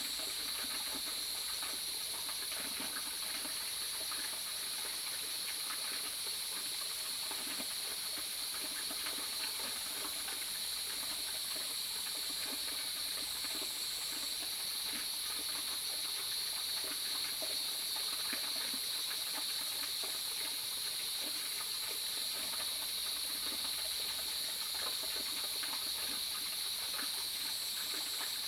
華龍巷, 魚池鄉, Nantou County - Upstream streams
Cicadas cry, Bird sounds, Small streams
Zoom H2n MS+XY